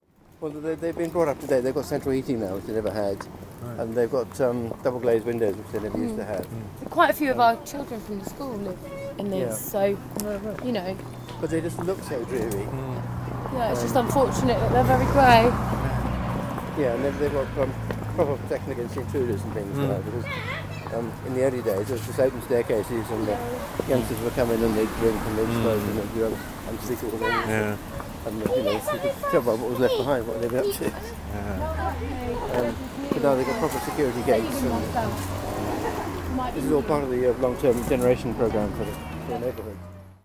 Efford Walk Two: About flats on Torridge Way - About flats on Torridge Way
24 September 2010, 17:27